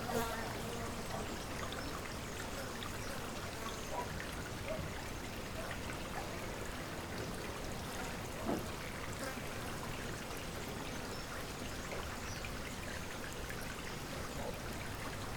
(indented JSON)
{
  "title": "Povoa Dos Leiras Entrance Portugal - PovoaDosLeirasEntrance02",
  "date": "2012-07-18 16:30:00",
  "description": "small road in Povoa das Leiras, water is running over the cobblestone road, animals behind the metal doors of the buildings\nworld listening day",
  "latitude": "40.85",
  "longitude": "-8.16",
  "altitude": "750",
  "timezone": "Europe/Lisbon"
}